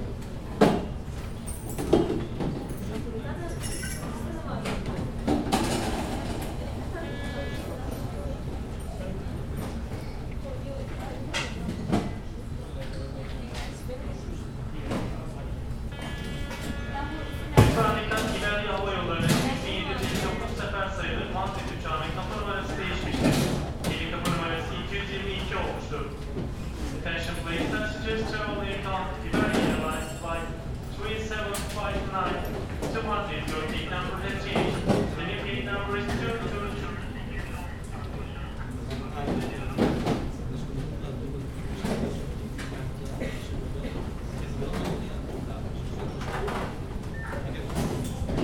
Istanbul Ataturk International Airport, security check at gate 213
Atatürk Havaalanı, Bakırköy/Istanbul Province, Turkey, 13 October